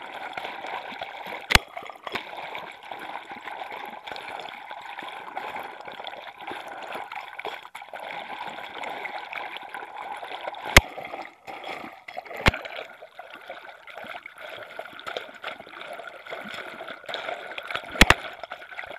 Općina Zadar, Croatia - Hydrophone Recording In Zadar, Croatia
Hydrophone recording from the full speed boat